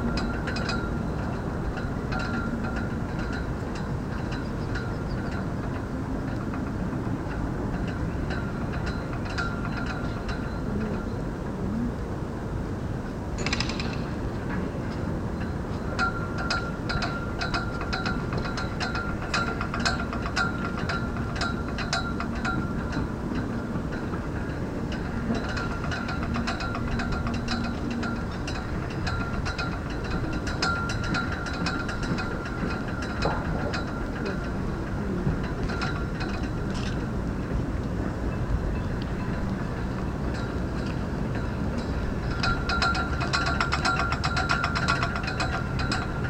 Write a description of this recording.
Hungary, Balaton Lake, port, wind